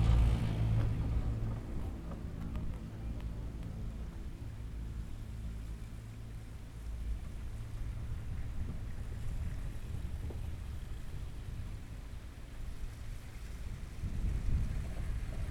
Hochmaisbahn, Hinterthal, Austria - Hochmaisbahn chairlift, top to bottom
Riding the Hochmaisbahn on a hot summer day.
23 July 2015, 15:30